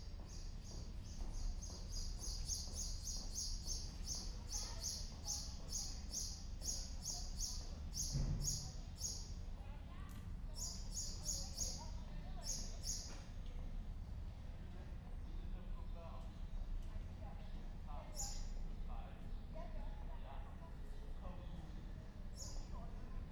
Triq It-Dejqa, Victoria, Malta - afternoon street ambience
Gozo island, Victoria, Triq It-Dejqa, afternoon ambience in a small street
(SD702 DPA4060)